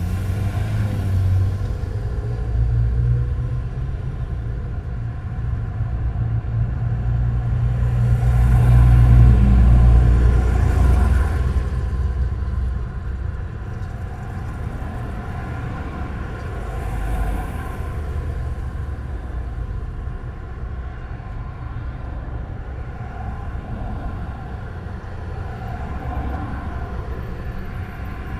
Tallinn, Baltijaam trash bins - Tallinn, Baltijaam trash bins (recorded w/ kessu karu)
hidden sounds, omnidirectional microphones in two frequency-filtering trash bins at Tallinns main train station. the frequency is dictated by the amount of trash.